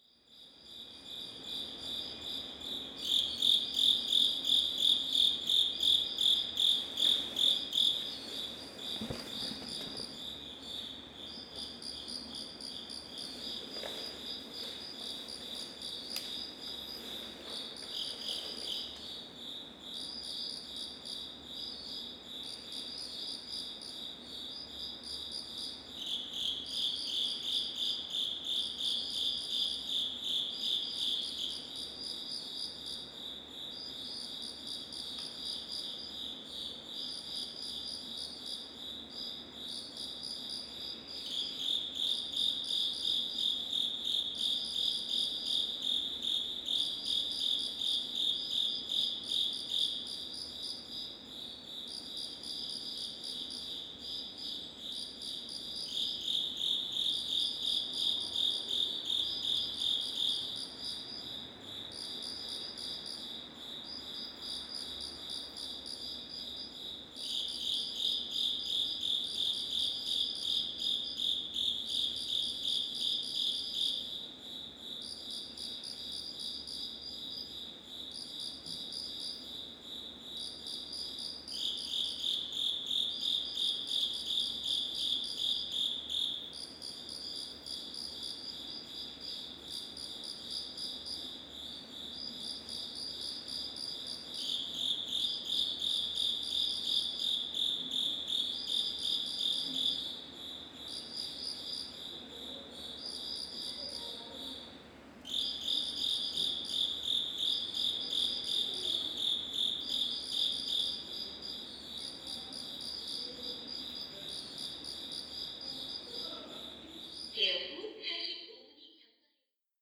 Seoul Arts Tunnel, ramp tunnel, bugs chirping in nice reflection
예술의전당 경사로 터널, 풀벌레